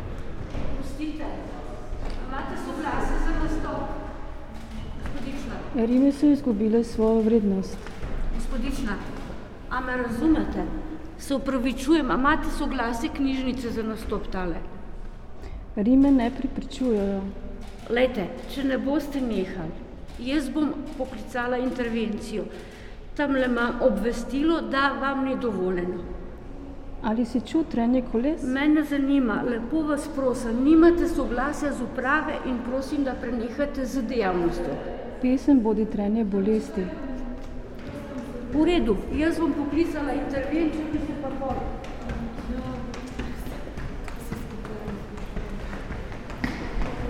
{"title": "Narodna in Univerzitetna knjižnica, Ljubljana, Slovenia - Secret listening to Eurydice 6", "date": "2012-11-27 17:00:00", "description": "first 6 min and 30 sec of one hour performance Secret listening to Eurydice 6, staircase of the entrance hall", "latitude": "46.05", "longitude": "14.50", "altitude": "301", "timezone": "Europe/Ljubljana"}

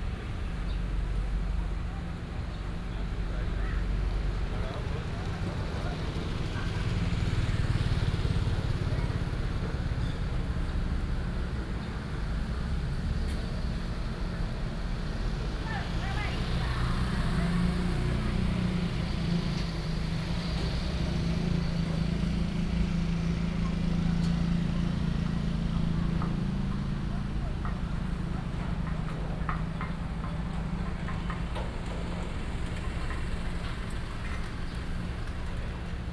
{"title": "枋寮鄉海邊路, Fangliao Township - seafood market", "date": "2018-04-24 11:28:00", "description": "seafood market, traffic sound, birds sound", "latitude": "22.36", "longitude": "120.59", "altitude": "5", "timezone": "Asia/Taipei"}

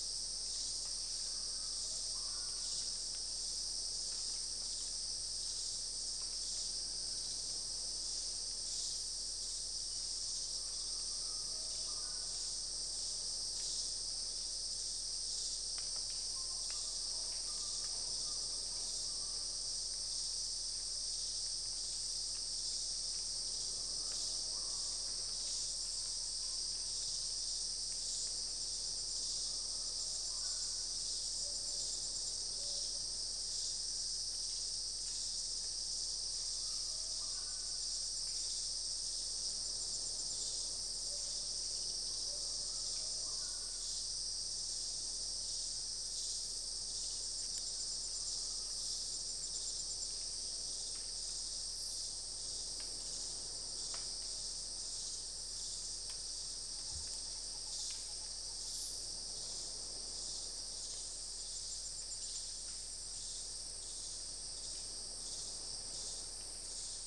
{"title": "Daintree National Park, QLD, Australia - evening at the bottom of mount sorrow", "date": "2014-01-02 18:20:00", "description": "recorded just as night was beginning. this was very close to the infamous bloomfield track and occasionally you can hear cars driving against the dirt road. walking along this road was very unpleasant as you would very quickly become covered in dust, and the leaves of the trees in the surrounding rainforest were also covered.\nrecorded with an AT BP4025 into an Olympus LS-100.", "latitude": "-16.08", "longitude": "145.46", "altitude": "95", "timezone": "Australia/Brisbane"}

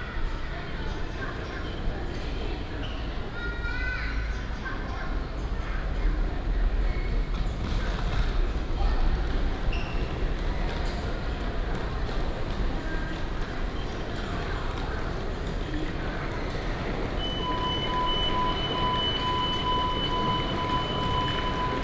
{"title": "Chiayi Station, Taibao City, Taiwan - In the station hall", "date": "2016-02-12 19:50:00", "description": "In the station hall", "latitude": "23.46", "longitude": "120.32", "altitude": "14", "timezone": "Asia/Taipei"}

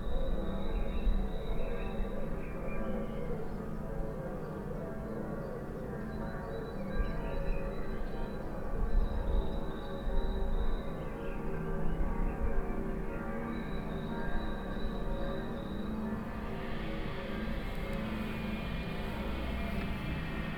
{"title": "Lange Str., Hamm, Germany - national easter bells...", "date": "2020-04-12 09:38:00", "description": "Easter bells of all the churches synchronized under pandemic... (the bell nearby, beyond corona, just happens to be in need of repair… )", "latitude": "51.67", "longitude": "7.80", "altitude": "65", "timezone": "Europe/Berlin"}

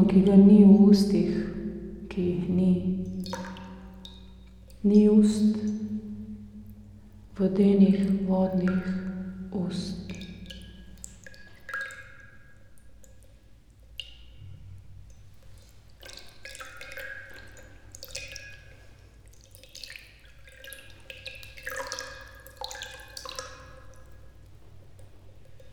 {"title": "chamber cistern, wine cellar, Maribor - water, porcelain bowl, words", "date": "2015-11-26 11:04:00", "description": "reading poem by Dane Zajc, Govori voda", "latitude": "46.56", "longitude": "15.65", "altitude": "274", "timezone": "Europe/Ljubljana"}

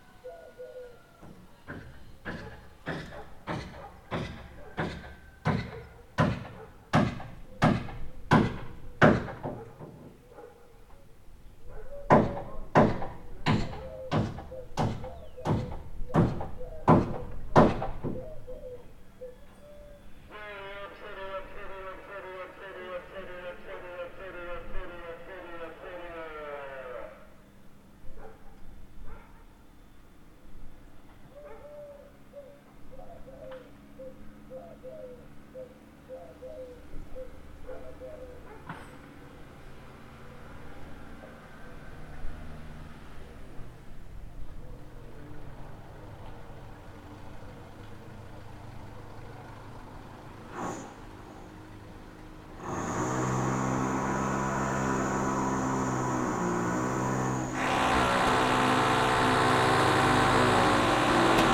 {"title": "Επαρ.Οδ. Φιλώτας - Άρνισσα, Αντίγονος 530 70, Ελλάδα - House renovation and local sailor", "date": "2021-09-08 13:14:00", "description": "Record by: Alexandros Hadjitimotheou", "latitude": "40.64", "longitude": "21.76", "altitude": "566", "timezone": "Europe/Athens"}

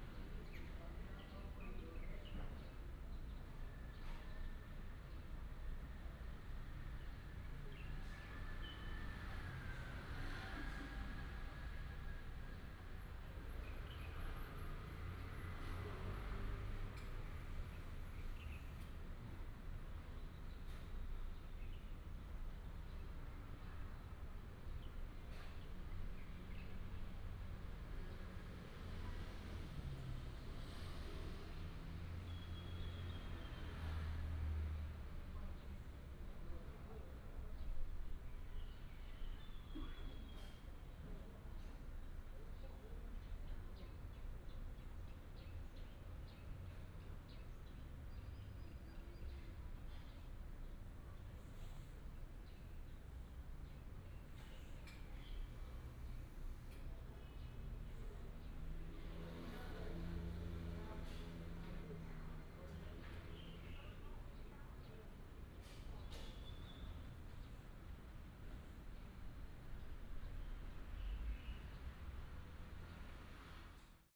{"title": "Ln., Zhonghua Rd., Changhua City - In the alley", "date": "2017-03-18 15:31:00", "description": "In the alley, Traffic sound, birds sound", "latitude": "24.08", "longitude": "120.54", "altitude": "22", "timezone": "Asia/Taipei"}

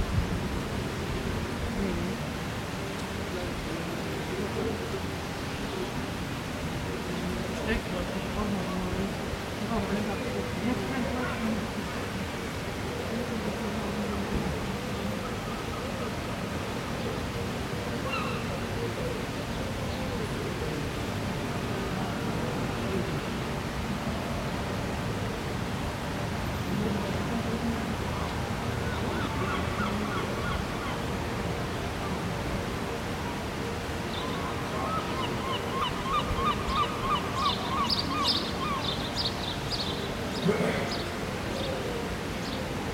{"title": "Holstenstraße, Kiel, Deutschland - Sunday morning in Kiel", "date": "2021-05-30 09:48:00", "description": "Quiet Sunday morning in the pedestrian zone, shops are closed, some people passing by, a little traffic in a distance, birds (sparrows and gulls), distant church bells and 10 o'clock chimes of the town hall clock. Sony PCM-A10 recorder with xy microphone and furry windjammer.", "latitude": "54.32", "longitude": "10.13", "altitude": "6", "timezone": "Europe/Berlin"}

